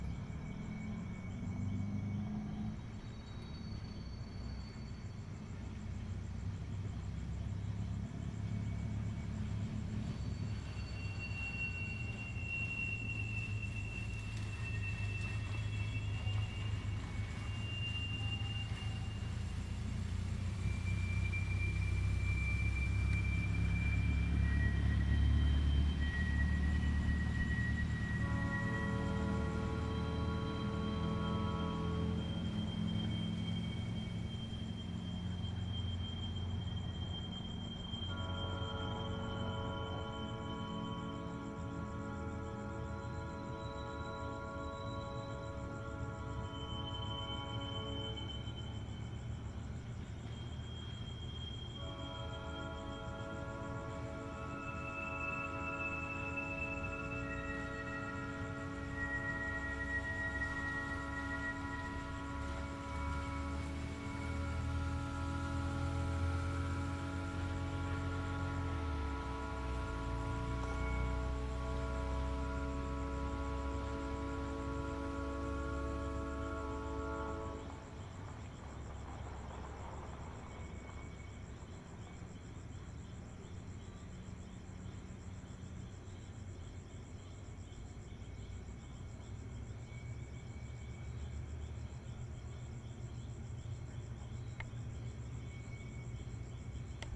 El Maestro, Monte Caseros, Corrientes, Argentina - Tren fantasma
Grabado a medianoche, desde la ventana de un hotel donde me encontraba hospedada por un trabajo temporario, es del 2013. Hasta ahora no tengo una explicación lógica de por qué suena esto de esta manera. Lo llamé tren fantasma porque es lo más verosímil que encontré. Lamento que la ubicación no sea exacta pero no recuerdo el nombre del hotel, igualmente era en el centro del pueblo. 2.0 Zoom H4N mics incorporados
15 January 2013